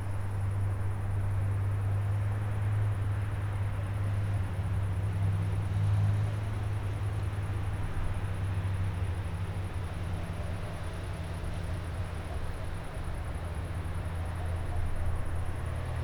Olsztyn, UWM, Weta - Cathedral veterinary